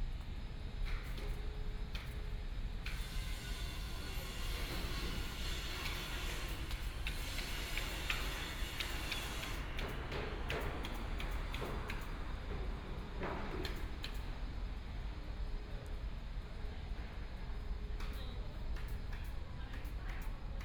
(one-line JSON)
{"title": "Miao Jiang Road, Shanghai - Environmental sounds", "date": "2013-11-26 16:29:00", "description": "Traffic Sound, Environmental sounds, The sound of distant ships, Construction site noise, Binaural recording, Zoom H6+ Soundman OKM II", "latitude": "31.20", "longitude": "121.49", "altitude": "27", "timezone": "Asia/Shanghai"}